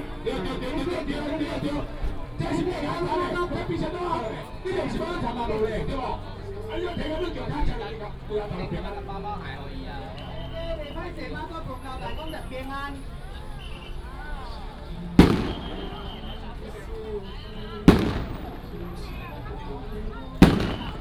Matsu Pilgrimage Procession, People are invited to take free food, At the corner of the road
1 March, ~14:00